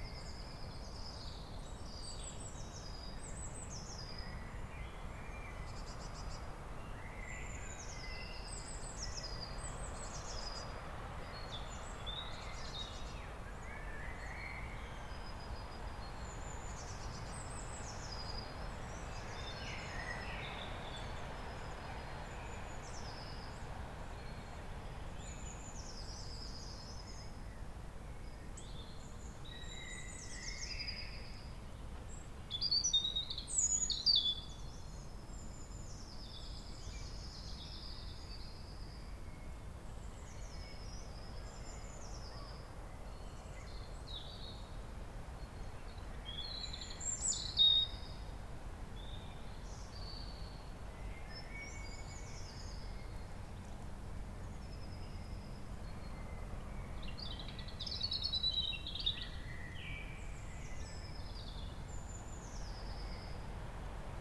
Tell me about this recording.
Forest ambience with anthropophony, a horse and some people passing by, birds, wind in the trees, dog barking, distant traffic noise and bassy agricultural machinery. Zoom H6 recorder in-built xy microphone with furry wind protection.